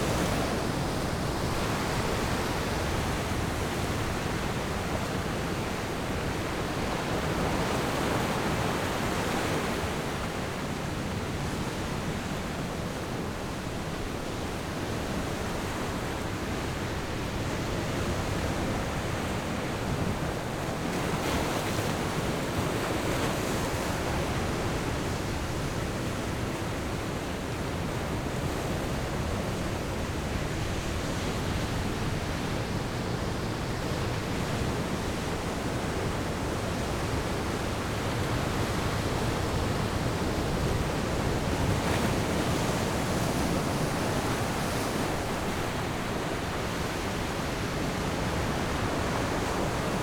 Sound of the waves
Zoom H6 +Rode NT4